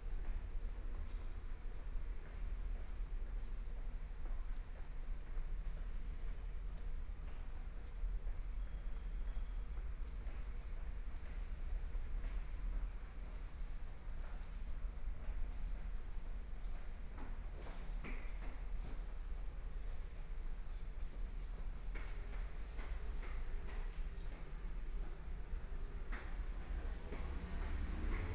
Walking on the road （ZhongShan N.Rd.）from Nanjing W. Rd. to Chang'an W. Rd., Traffic Sound, Binaural recordings, Zoom H4n + Soundman OKM II
20 January 2014, 13:11